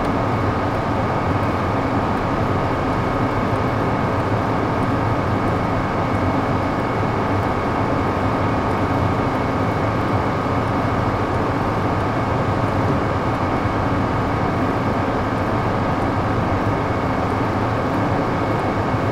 fand and air conditioners are working at full power in this heat
Lithuania